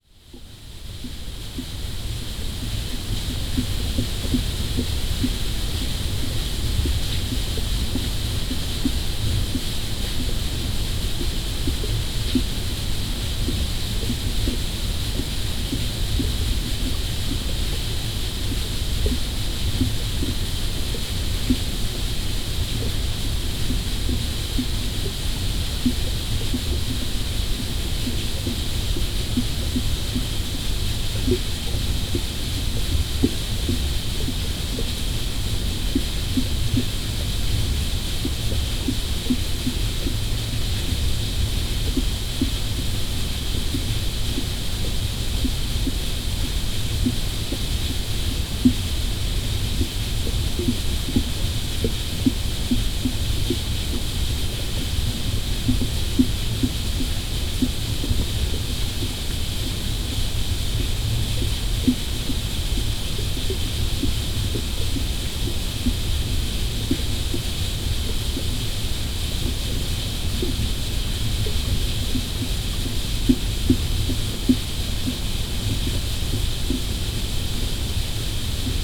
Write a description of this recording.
Contact microphone on a log in water near waterfall. AKG c411, MixPreII